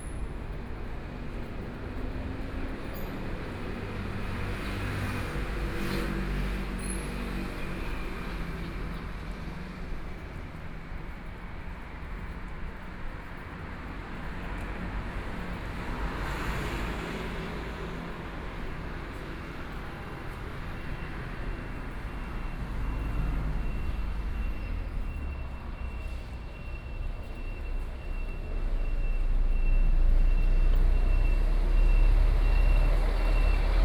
鹽埕區光明里, Kaoshiung City - soundwalk
In the morning, Walking in the streets, Traffic Sound
Kaohsiung City, Taiwan, 14 May 2014, 06:46